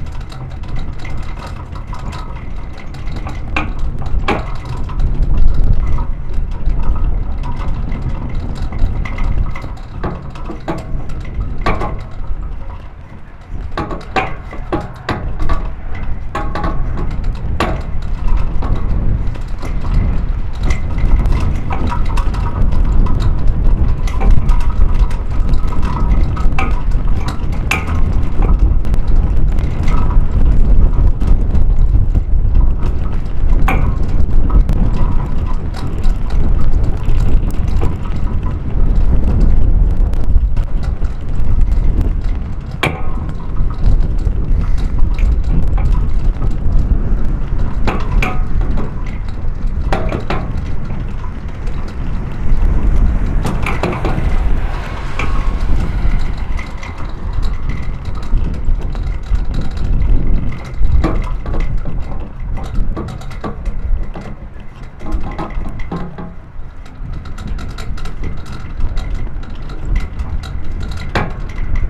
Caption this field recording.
steel wire blasting at the flagpole in strong gushes of wind.